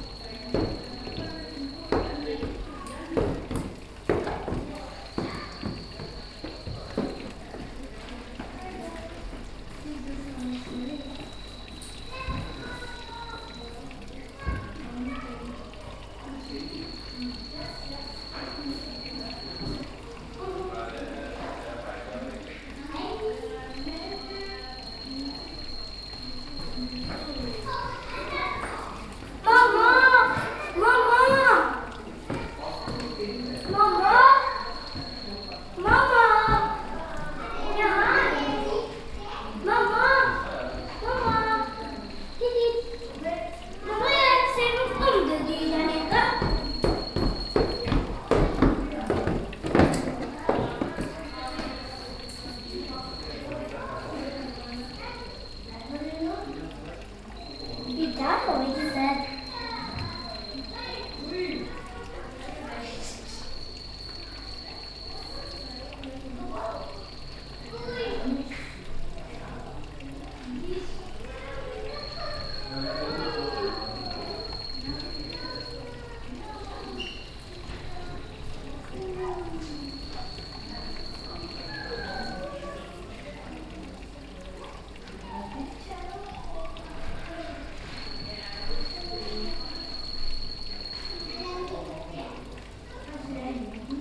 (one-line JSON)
{"title": "Oslo, St Olavsgate, Reptilpark", "date": "2011-06-05 12:18:00", "description": "Norway, Oslo, reptiles, water, children, binaural", "latitude": "59.92", "longitude": "10.74", "timezone": "Europe/Oslo"}